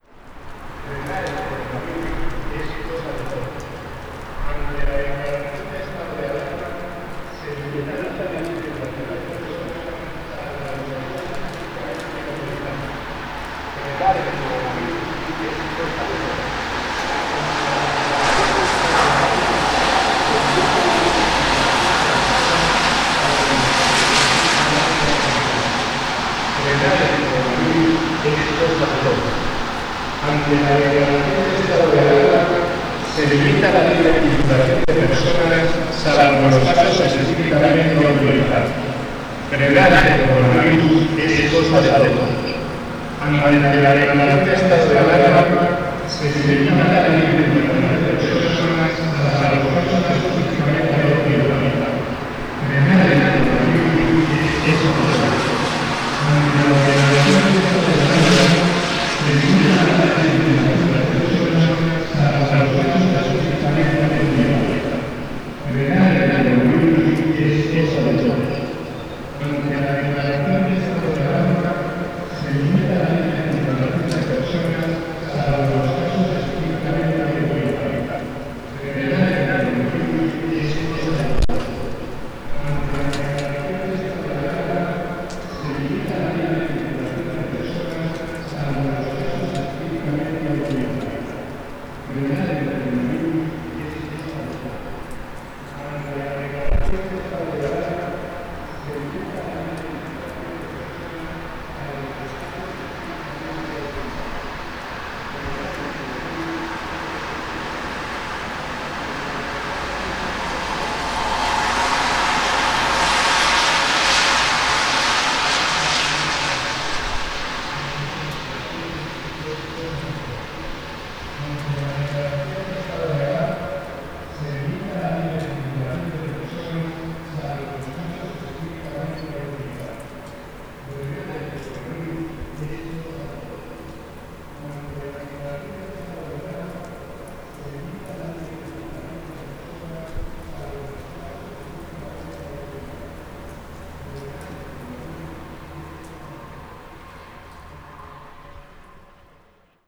On the very first day of enforced confinement, police cars towing PA systems circulated around Spanish cities, booming out a prerecorded message announcing the lockdown. It sounded rather ominous, particularly to those of us who had recently watched the "Chernobyl" series.
It caught me rather unprepared - I had to rush out to the balcony, recorder in hand, and set levels and ride gain as I went. Thus the technical issues with the recording. Still, it was as good as a run-and-gun recording could go, and, listening back, it does seem to irradiate that sense of shock of the first days of the quarantine.
Burgos, Castilla y León, España, 16 March 2020, 20:45